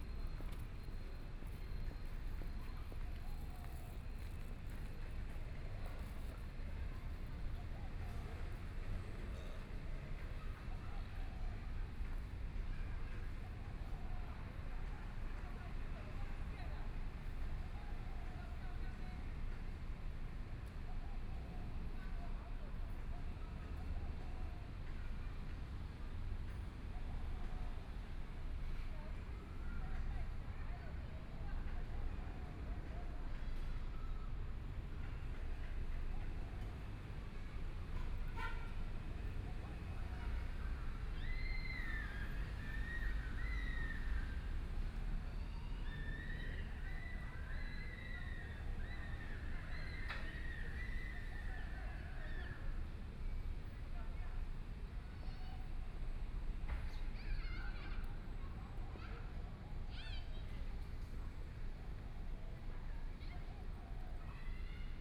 Sitting in the park, Traffic Sound
Please turn up the volume
Binaural recordings, Zoom H4n+ Soundman OKM II